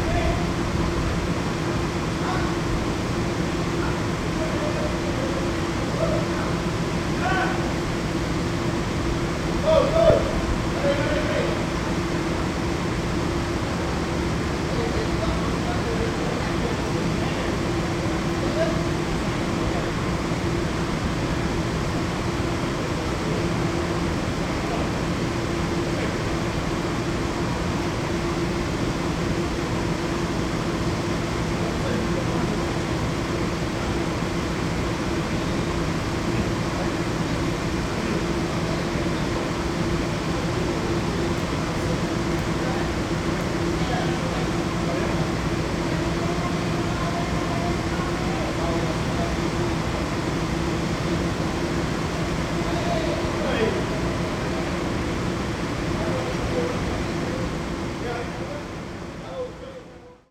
Medan Gopeng, Ipoh, Perak, Malaysia - drone log 22/02/2013
Ipoh bus terminal
(zoom h2, build in mic)
22 February 2013